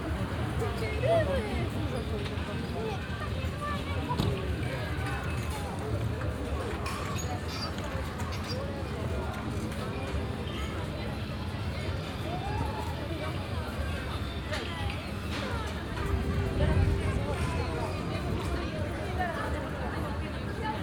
Leba, Wojska Polskiego street - shove-halfpenny parlor
binaural rec / walking towards a game parlor on one of the busiest promenades in Leba. a short visit in the shove-halfpenny section of the parlor.
Leba, Poland